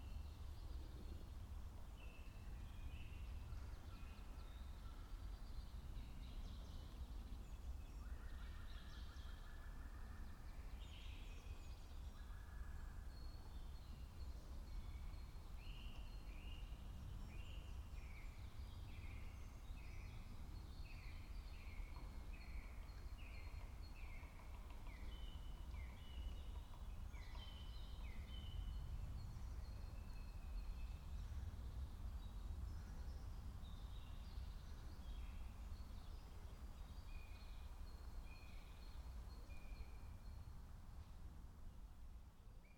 dale, Piramida, Slovenia - quiet walk
birds, small sounds, quiet steps of unexpected passer-by
Vzhodna Slovenija, Slovenija, 11 March 2013, ~17:00